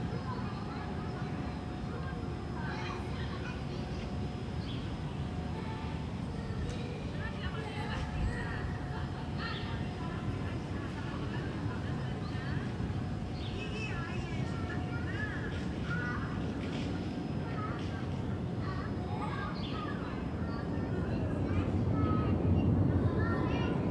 Wollankstraße, Berlin, Deutschland - Wollankstraße 57A-D, Berlin - backyard facade with balconies, airplanes
Wollankstraße 57A-D, Berlin - backyard facade with balconies, airplanes.
The day after I met Roberto, Toni and Tyson here, three yound teenagers from the neigbourhood. Two of them turned out to be astonishingly skilled beat box artists.
[I used the Hi-MD-recorder Sony MZ-NH900 with external microphone Beyerdynamic MCE 82]
Wollankstraße 57A-D, Berlin - Hinterhoffassade mit Balkons, Flugzeuge.
Einen Tag später traf ich am selben Ort Roberto, Toni und Tyson, drei Jungs aus der Nachbarschaft.
[Aufgenommen mit Hi-MD-recorder Sony MZ-NH900 und externem Mikrophon Beyerdynamic MCE 82]
Berlin, Germany, 12 October, 13:10